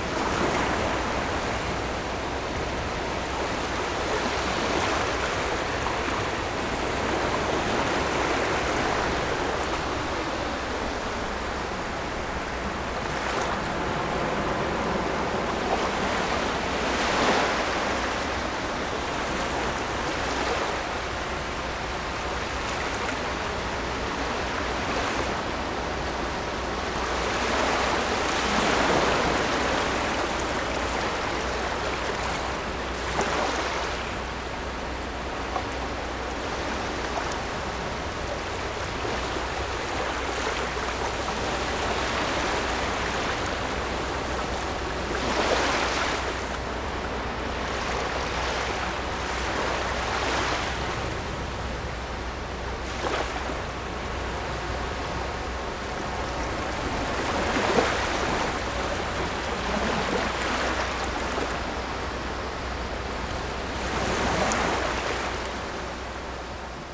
員潭溪, 金山區豐漁里 - Sound tide
Streams at sea, Sound of the waves
Sony PCM D50+ Soundman OKM II